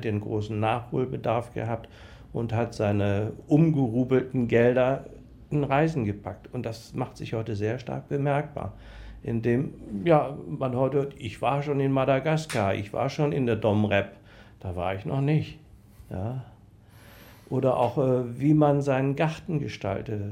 Produktion: Deutschlandradio Kultur/Norddeutscher Rundfunk 2009
Lubeck, Germany, 8 August 2009